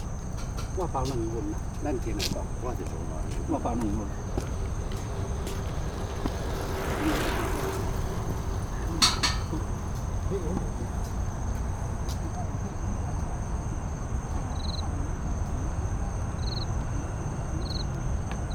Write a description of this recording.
In the bike lane, In the bush, MRT trains through, Insect sounds, Binaural recordings, Sony PCM D50 + Soundman OKM II